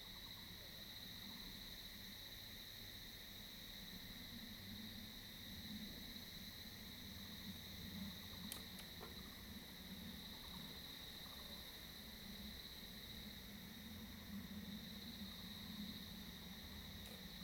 牡丹鄉199縣道4K, Mudan Township - Mountain road
Mountain road, Cicada sounds, Bicycle Society, Bird call, The voice of a distant aircraft
Zoom H2n MS+XY